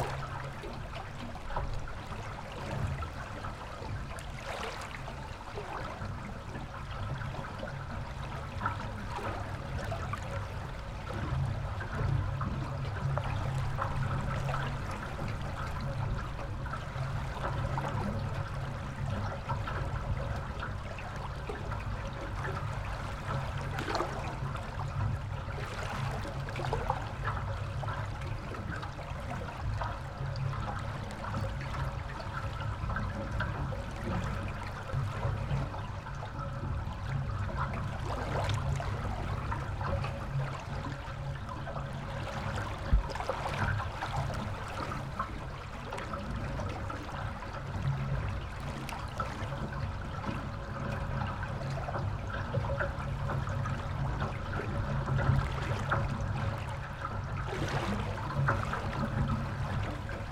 Utena, Lithuania, study of small bridge
after the flood. little metallic bridge over small river. 4 channels recording capturing happy waters and vibrations of the bridge itself